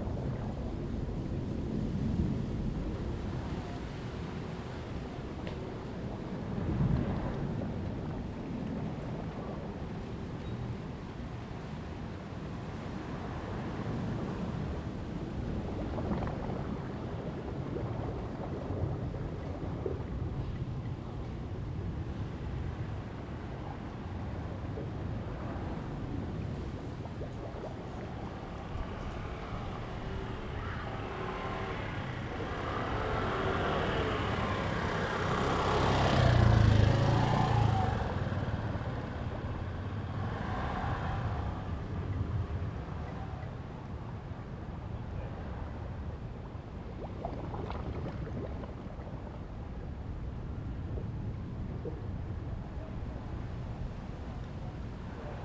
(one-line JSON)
{"title": "淡水河, Tamsui District, New Taipei City - On the river bank", "date": "2015-08-07 18:49:00", "description": "Before typhoon, Sound tide, On the river bank\nZoom H2n MS+XY", "latitude": "25.17", "longitude": "121.44", "altitude": "7", "timezone": "Asia/Taipei"}